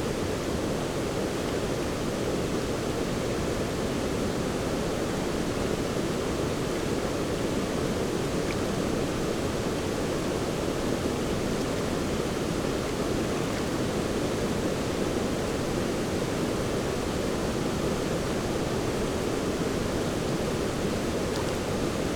Kerkerbachtal, Hofen, Runkel, Deutschland - sewage plant, small waterfall
small waterfall of the Kerkerbach stream (ca. 3m altitude) near a waste water plant
(Sony PCM D50, Primo EM272)